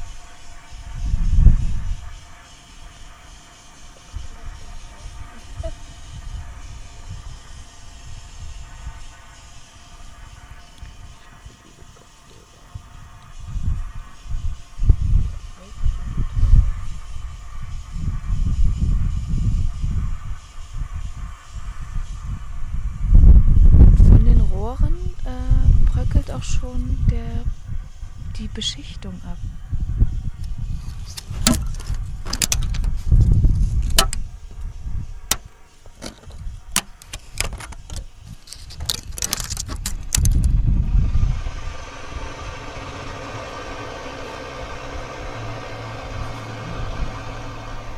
Johannesvorstadt, Erfurt, Deutschland - Die Nachtwanderer - Industrierohre
industrial pipes...walking and talking through the night...finding places and sounds...
wondering...remembering...